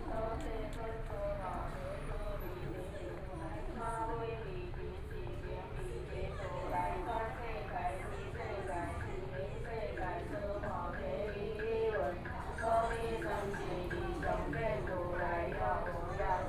北投區桃源里, Taipei City - Temple festivals
Temple festivals, Beside the road, Traffic Sound, Fireworks and firecrackers, Chanting, Across the road there is Taiwan Traditional opera
Please turn up the volume
Binaural recordings, Zoom H4n+ Soundman OKM II